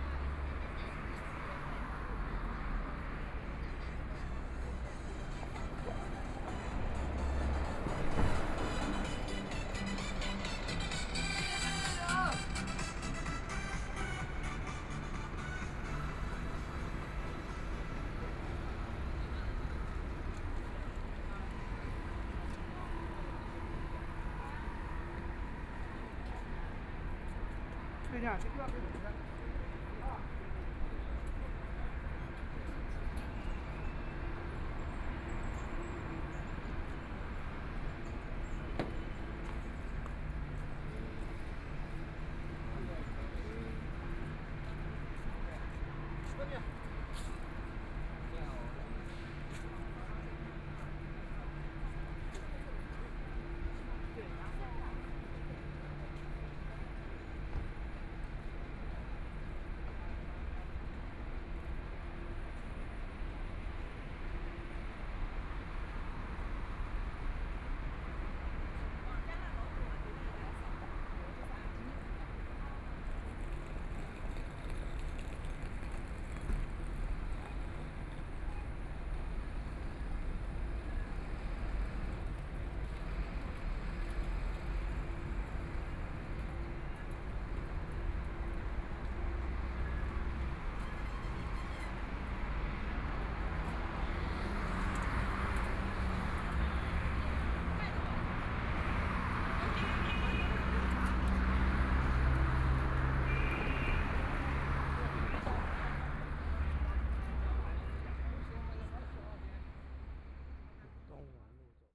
Pudong South Road, Pudong New Area - walk
Noon time, in the Street, Footsteps, Traffic Sound, Rest time, Street crowd eating out, Binaural recording, Zoom H6+ Soundman OKM II